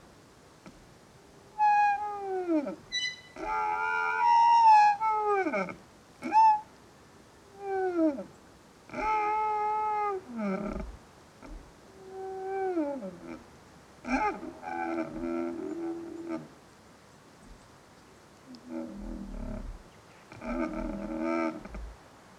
another singing tree in a wind